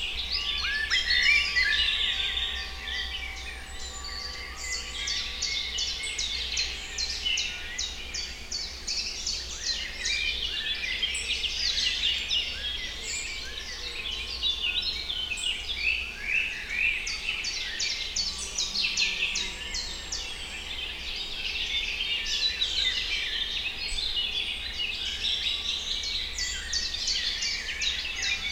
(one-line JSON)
{"title": "Grgar, Grgar, Slovenia - Grgar Slatna", "date": "2020-06-20 09:05:00", "description": "Birds in forest. Recorded with Sounddevices MixPre3 II and LOM Uši Pro.", "latitude": "46.01", "longitude": "13.66", "altitude": "357", "timezone": "Europe/Ljubljana"}